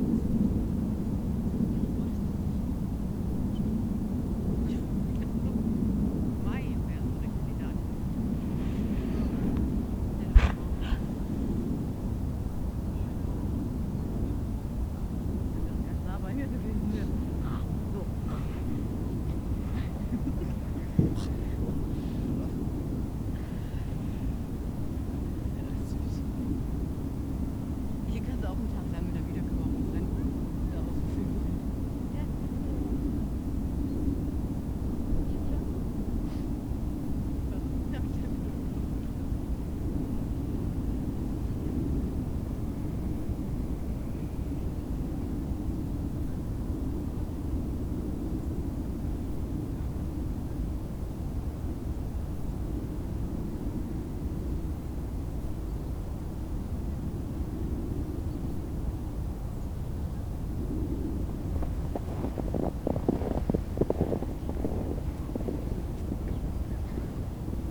berlin, tempelhofer feld: grasland - berlin, tempelhofer feld: grassland
cold and windy afternoon (-10 degrees celsius), people busy with kites, promenaders and the sound of motorway a100 in the background
Berlin, Germany, 4 December